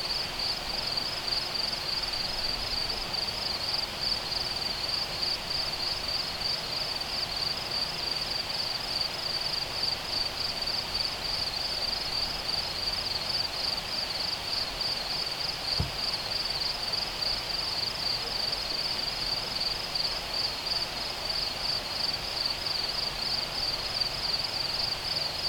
Lone Pine, CA, USA - Crickets on Owen's River Bank
Metabolic Studio Sonic Division Archives:
Recording of crickets taken at night on bank of Owens River. Recorded on Zoom H4N
14 August 2022, 22:00